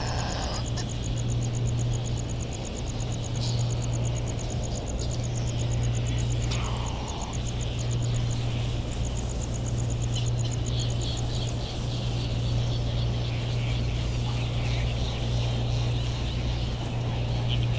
United States Minor Outlying Islands - Bonin Petrel soundscape
Recorded on the path to the All Hands Club ... Sand Island ... Midway Atoll ... recorded in the dark ... open lavalier mics ... flight calls and calls from bonin petrels ... calls and bill clapperings from laysan alabatross .. calls from white terns ... a cricket ticks away the seconds ... generators kick in and out in the background ...